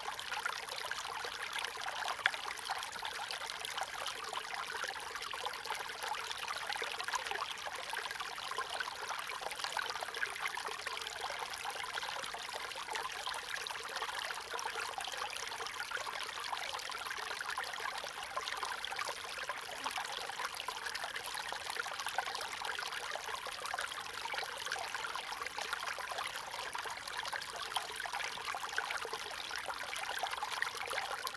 乾溪, 成功里, Puli Township - Small streams
Stream sound
Zoom H2n MS+ XY